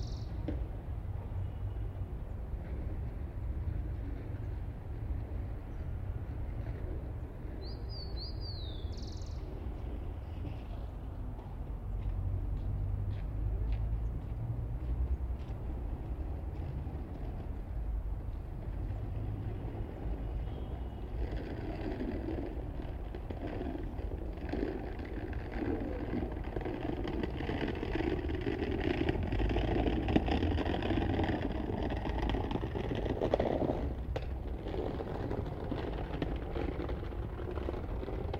Cl., Bogotá, Colombia - Los Monjes Park
In this ambience you can hear a neighborhood park in an afternoon in Bogotá, there are a lot of birds chirping, you can hear a someone walking with a wheeled suitcase through asphalt, people talking, dogs barking, and since it is near an avenue you can hear car engines and car horns.
Región Andina, Colombia, 27 May 2021, 17:30